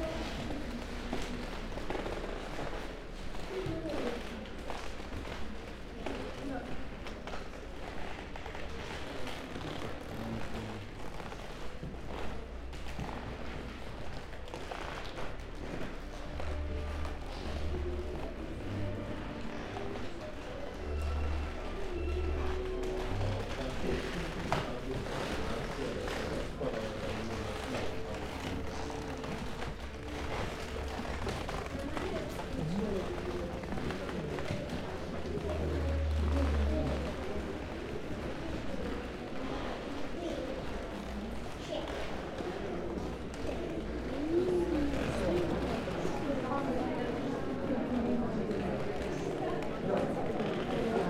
Rijeka, Croatia, Night Of Museums - The Museum Night 2017 - Rijeka - Pomorski i povijesni muzej Hrv. prim.
Night Of Museums 2017